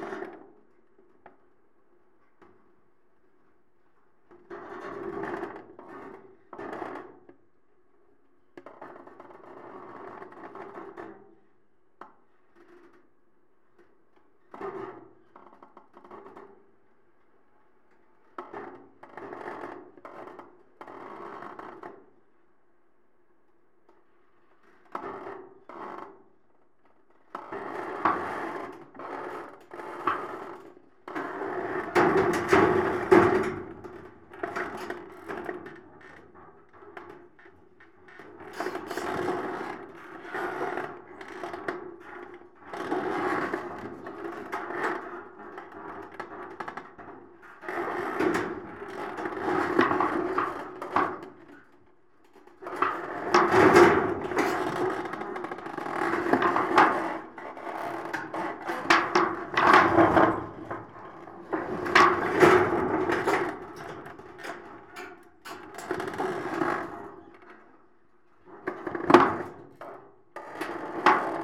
Av. Yves Brunaud, Toulouse, France - metalic vibration 05
métal palisade moving by the action of the wind
+ rubbing of tree branches
Captation : ZOOM H4n